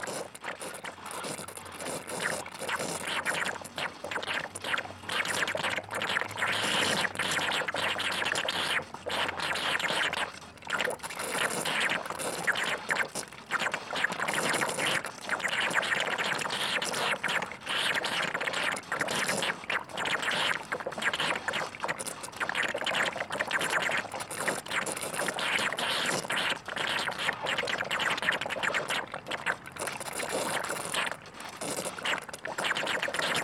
Bolton Hill, Baltimore, MD, 美国 - Stack?
MD, USA, 4 December 2016